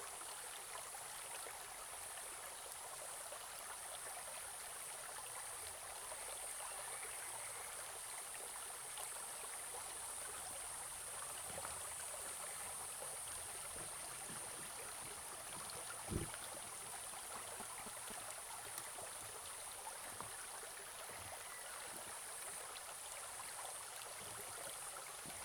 種瓜坑溪, 埔里鎮成功里, Taiwan - Flow sound
Small streams, In the middle of a small stream, Flow sound
Zoom H2n MS+ XY+Spatial audio
Nantou County, Taiwan, 14 July, ~10:00